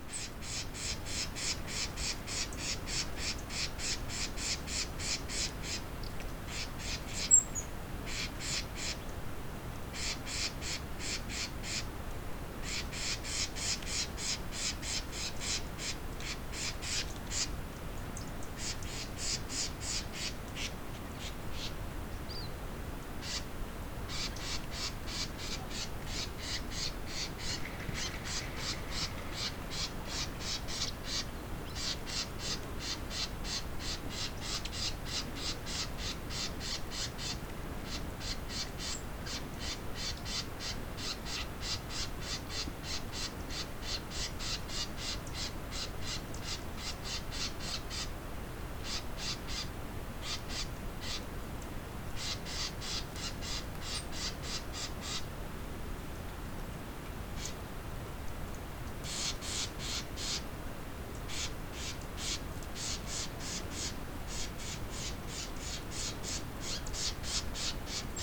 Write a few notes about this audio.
Light winds and birds at Poerto Yartou shore, wind SW 4 km/h. The son of Swiss immigrants, Alberto Baeriswyl Pittet was founding in 1908 the first timber venture in this area: the Puerto Yartou factory.